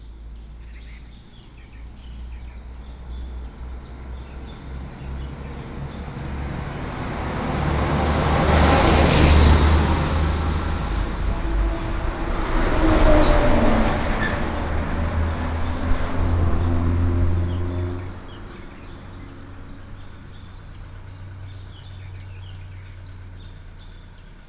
丹路, 南迴公路 Shizi Township - Bird call
Beside the road, Chicken roar, in the morning, Traffic sound, Bird call
Binaural recordings, Sony PCM D100+ Soundman OKM II
Shizi Township, Pingtung County, Taiwan, 28 March 2018